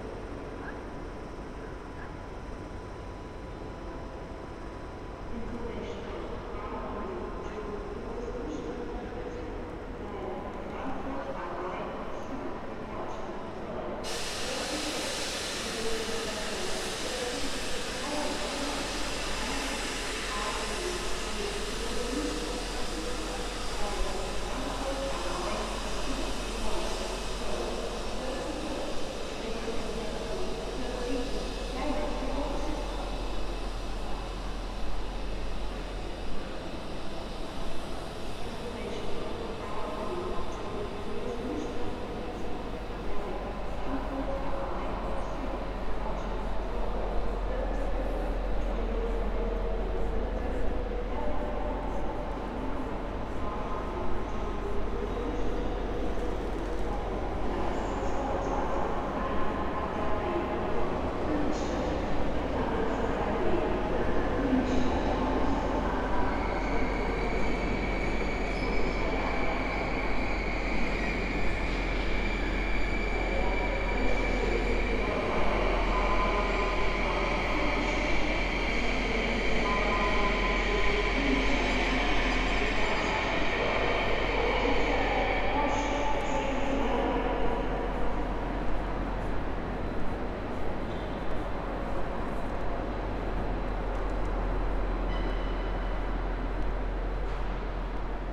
Frankfurt (Main) Hauptbahnhof, Gleis - Gleis 21 Train to Bruessels does not drive
This is the third recording of the 21st of March 2020, the people were already told only to leave the house in urgent cases. Train connections to Amsterdam, Paris and Brussels were interrupted because of the spreading of the corona virus. Thus the anouncment is audible that the train at 12:29 is cancelled. The recording is made on the platform where the train should have left on this quiet friday...